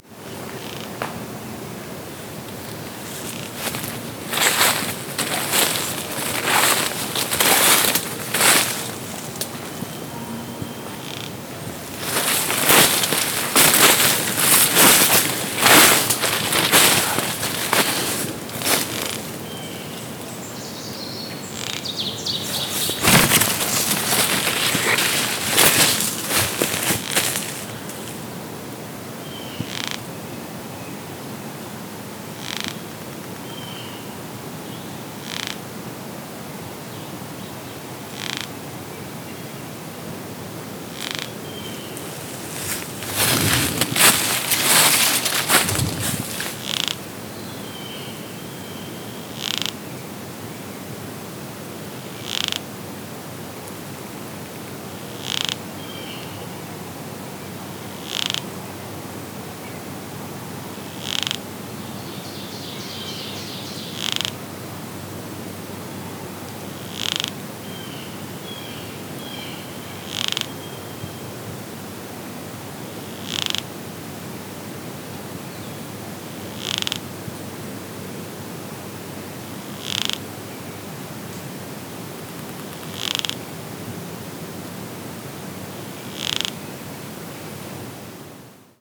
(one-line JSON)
{"title": "Mikisew Provincial Park, Canada - Pine sawyer beetle", "date": "2016-07-19 20:00:00", "description": "Sawyer beetle larva chewing on a dead pine tree. Recording begins at some distance and gradually approaches the sound source. Beetle larva not seen. Zoom H2n with EQ and volume postprocessing.", "latitude": "45.82", "longitude": "-79.51", "altitude": "365", "timezone": "America/Toronto"}